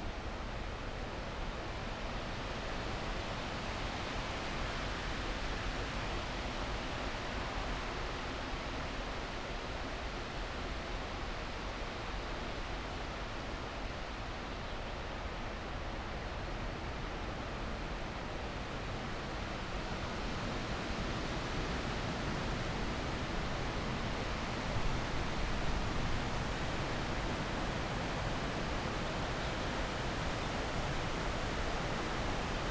fresh wind in the trees, near former waterworks, boilerhouse
(SD702, MKH8020)
Zehdenick, Germany